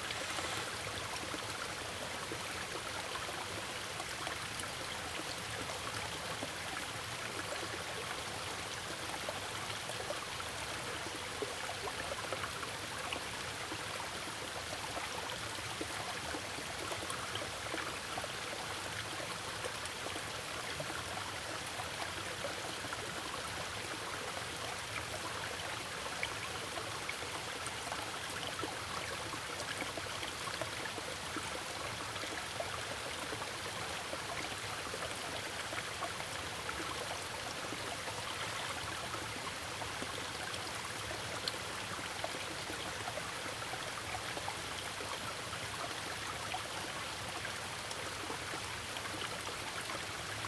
Zhonggua Rd., Puli Township, 南投縣 - Small streams
The sound of water streams
Zoom H2n MS+XY
21 April, ~11:00